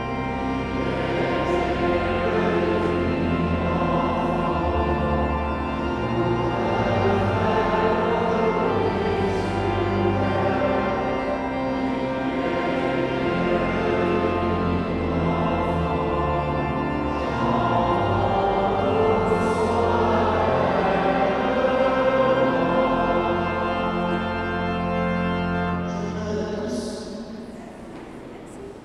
Sainte-Geneviève Church, Bordeaux, France - Catholic Christmas mass
Very end of a catholic mass for Christmas.
[Tech.info]
Recorder : Tascam DR 40
Microphone : internal (stereo)
Edited on : REAPER 4.54
24 December 2013